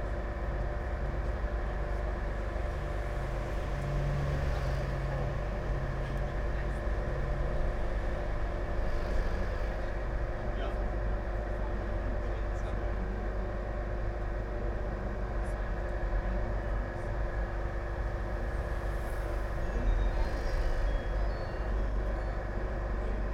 {"title": "Elétrico, Rua da Padaria, Lisboa, Portugal - ride on tram 28E", "date": "2017-10-26 15:35:00", "description": "Lisbon, old town near Castelo San Jorge, on tram Elétrico 28E (Sony PCM D50, DPA4060)", "latitude": "38.71", "longitude": "-9.13", "altitude": "15", "timezone": "Europe/Lisbon"}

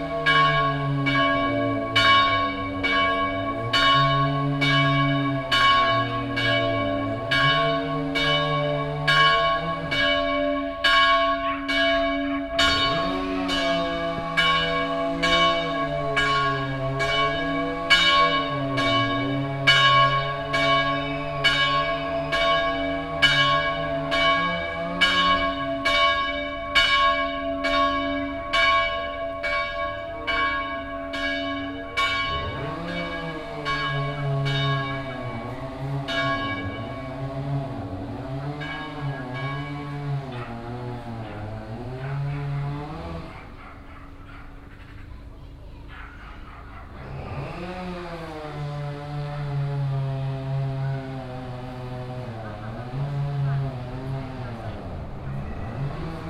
{"title": "merscheid, chuch, bells", "date": "2011-09-17 16:36:00", "description": "At the church in the early evening. The sound of the church bells accompanied by other village sounds like passing by traffic on the main road, a dog barking, a chain saw working on a barn yard across the street and two women having an evening conversation.\nMerscheid, Kirche, Glocken\nBei der Kirche am frühen Abend. Das Geräusch der Kirchenglocken begleitet von anderen Dorfgeräuschen wie das Vorbeifahren von Verkehr auf der Hauptstraße, ein bellender Hund, eine Kettensäge, die auf einem Bauernhof auf der anderen Seite der Straße arbeitet, und zwei Frauen, die eine Abendunterhaltung führen.\nMerscheid, église, cloches\nPrès de l’église, en début de soirée. Le son des cloches de l’église accompagné d’autres bruits du village, tel que le trafic roulant sur la rue principale, un chien qui aboie, une tronçonneuse sur une basse-cour de l’autre côté de la rue et deux femmes dans une conversation du soir.", "latitude": "49.95", "longitude": "6.11", "altitude": "485", "timezone": "Europe/Luxembourg"}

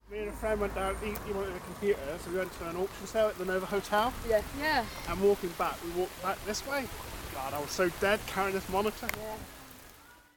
{"title": "Efford Walk One: Carrying a monitor up Military Road - Carrying a monitor up Military Road", "date": "2010-09-14 07:42:00", "latitude": "50.39", "longitude": "-4.10", "altitude": "53", "timezone": "Europe/London"}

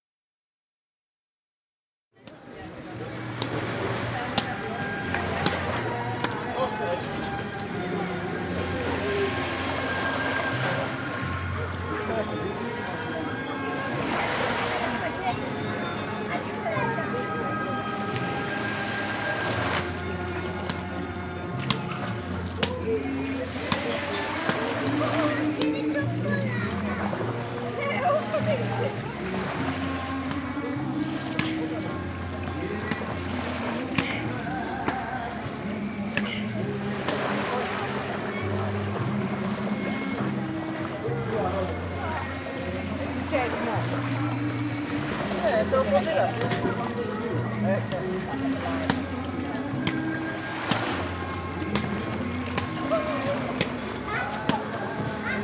{"title": "Aliki, Grèce - Aliki", "date": "2012-08-09 13:46:00", "description": "Small port in Parros Island.\nRestaurant, music and people playing racket ball on the beach.", "latitude": "37.00", "longitude": "25.14", "altitude": "4", "timezone": "Europe/Athens"}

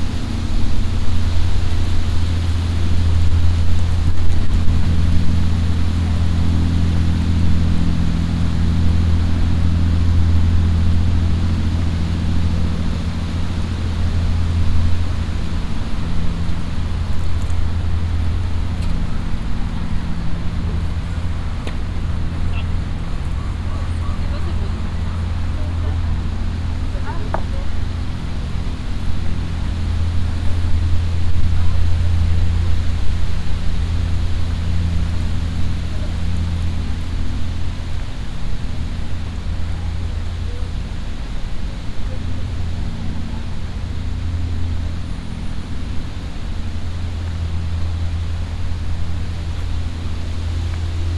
{"title": "Musée dOrsay, Paris, France - (375) Soundwalk through the south side of the Seine", "date": "2018-09-25 17:12:00", "description": "Soundwalk through the south side of the Seine to the Musée d'Orsay.\nrecorded with Soundman OKM + Sony D100\nsound posted by Katarzyna Trzeciak", "latitude": "48.86", "longitude": "2.32", "altitude": "35", "timezone": "Europe/Paris"}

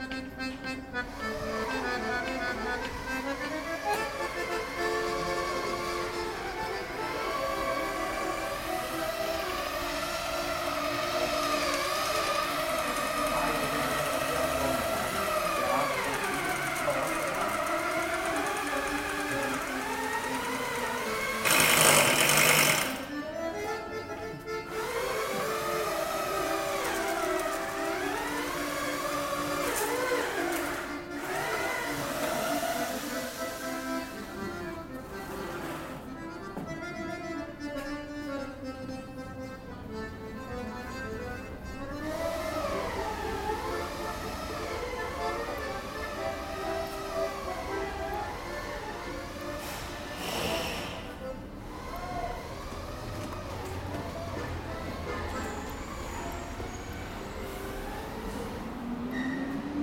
{"title": "accordeon player in pedestrian underway, st. gallen", "description": "a young accordeon player, partly in duet with building site. recorded sep 18th, 2008.", "latitude": "47.43", "longitude": "9.38", "altitude": "670", "timezone": "GMT+1"}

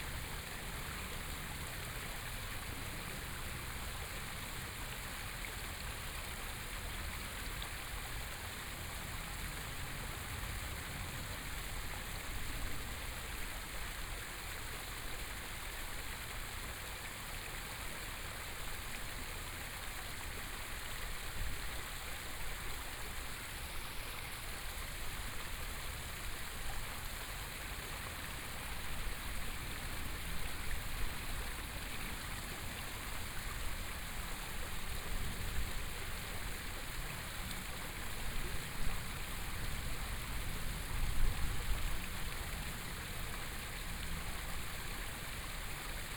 {"title": "Wanshou Park, Hualien City - Fountain", "date": "2014-02-24 11:52:00", "description": "Fountain\nBinaural recordings\nZoom H4n+ Soundman OKM II", "latitude": "23.99", "longitude": "121.61", "timezone": "Asia/Taipei"}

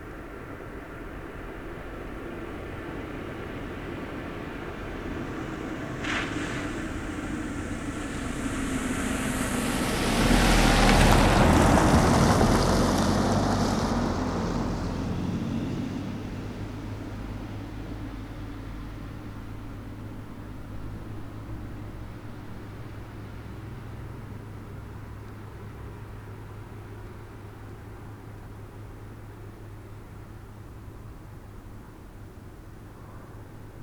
{"title": "Berlin: Vermessungspunkt Maybachufer / Bürknerstraße - Klangvermessung Kreuzkölln ::: 20.03.2013 ::: 03:10", "date": "2013-03-20 03:10:00", "latitude": "52.49", "longitude": "13.43", "altitude": "39", "timezone": "Europe/Berlin"}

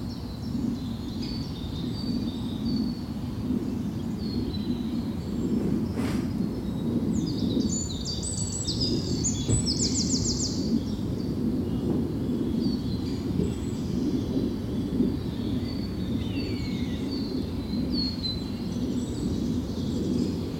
{"title": "Ermelo, Nederland - Near the Ermelose heide", "date": "2019-04-07 12:58:00", "description": "Random recording in a small forest near Ermelo.\nInternal mics of a Zoom H2.", "latitude": "52.28", "longitude": "5.64", "altitude": "36", "timezone": "Europe/Amsterdam"}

